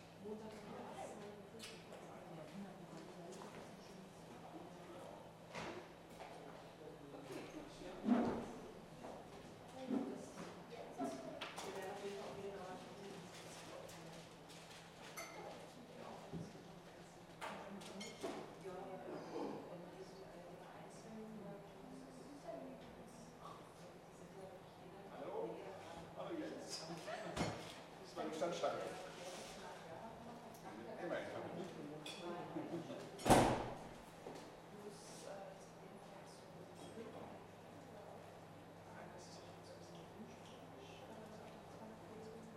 Berliner Vorstadt, Potsdam, Deutschland - Kantine